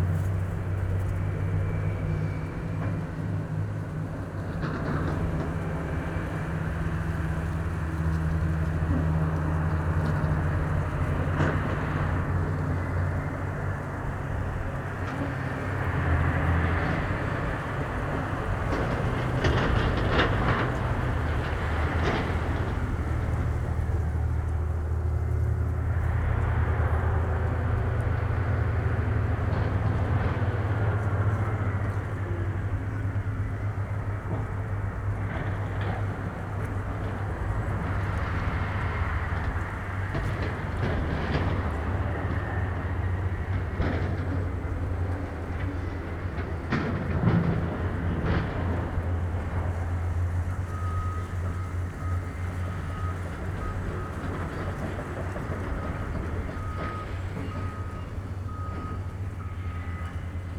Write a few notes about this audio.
a few meters from the previous location, sounds of heavy duty trucks and an excavator, (Sony PCM D50, DPA4060)